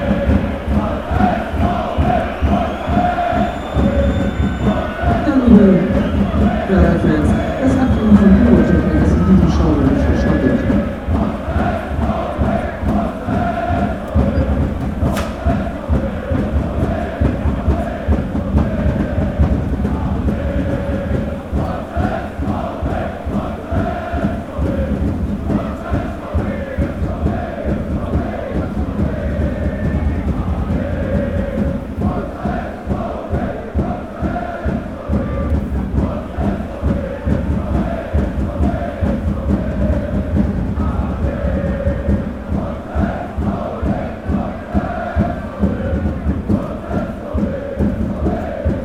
Millerntorstadion, guest fan block - supporters start bengal flares
FC St. Pauli against Werder Bremen, nearby the guest fan block. Before game starts, guest supporters start bengal flares